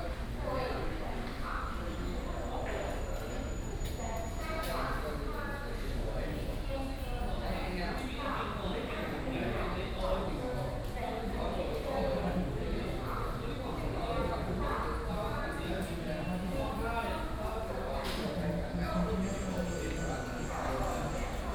Ruifang Station, Ruifang Dist., New Taipei City - In the station lobby
In the station lobby
Sony PCM D50+ Soundman OKM II